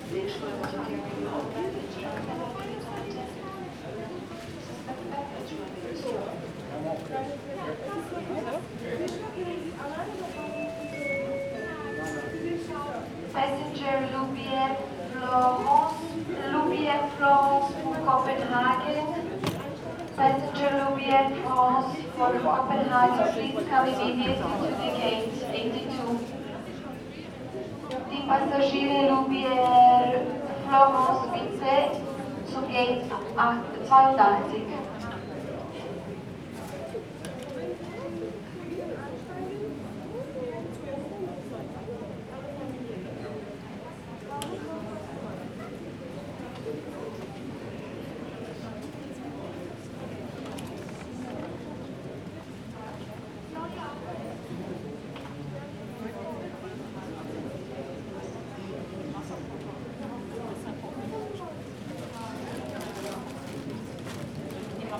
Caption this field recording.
(binarual) waiting in the line to the gate in the busy and crowded departure terminal